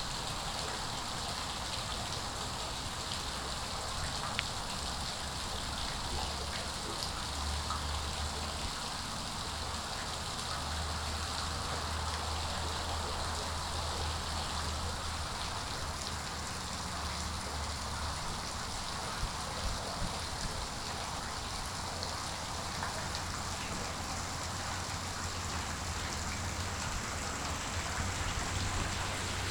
Montreal: Turcot Yards (forgotten manhole) - Turcot Yards (forgotten manhole)
equipment used: Korg Mr 1000
I found an entrance way into the sewer system just behind 780 saint-rémi and just love to sound of water.
Montreal, QC, Canada, 17 March